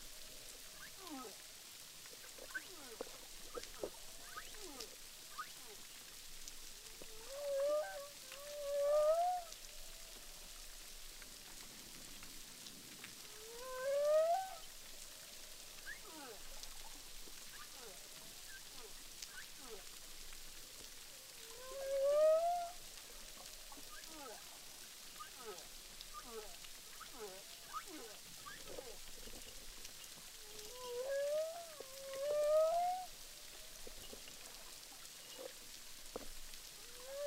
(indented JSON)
{
  "title": "humpback whales, boucan canot, ile de la reunion",
  "date": "2010-09-02 19:30:00",
  "description": "enregistré avec un hydrophone DPA lors du tournage SIGNATURE",
  "latitude": "-21.02",
  "longitude": "55.21",
  "timezone": "Indian/Reunion"
}